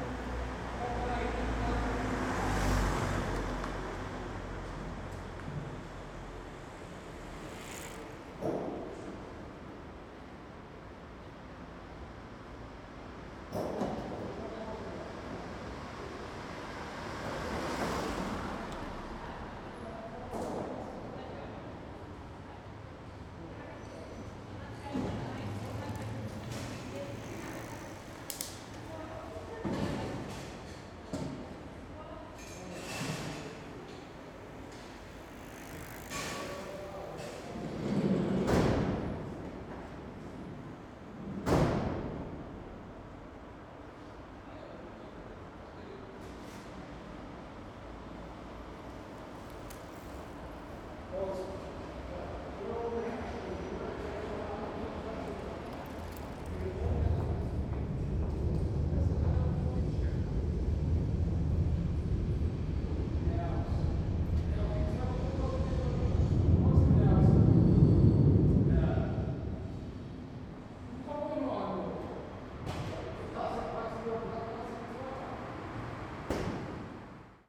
under bridge, workers, different kind of traffic on and below the brigde